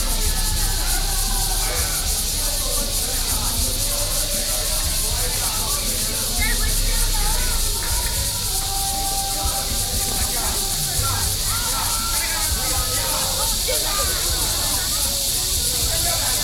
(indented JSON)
{
  "title": "Bali, New Taipei City - Hot summer",
  "date": "2012-07-01 18:08:00",
  "latitude": "25.16",
  "longitude": "121.43",
  "altitude": "6",
  "timezone": "Asia/Taipei"
}